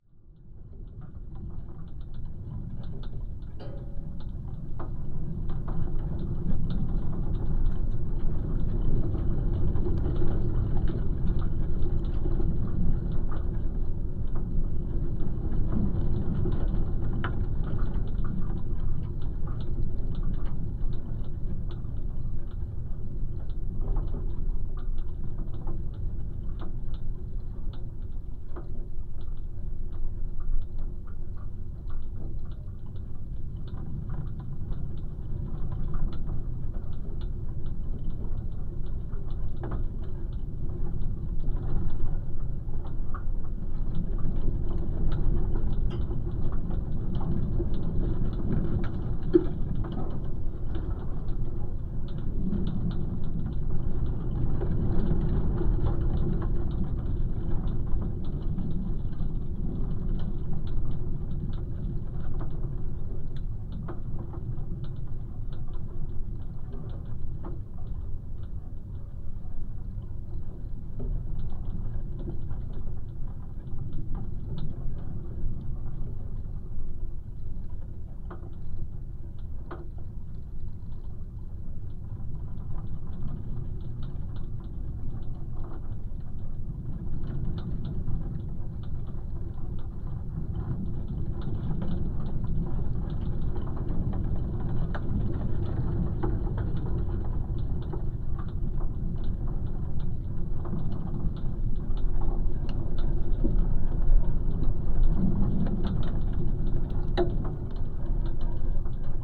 Utena, Lithuania, sounding fence
winter, wind, fence, contact microphones, geophone
Utenos apskritis, Lietuva, 2 February 2021, 16:20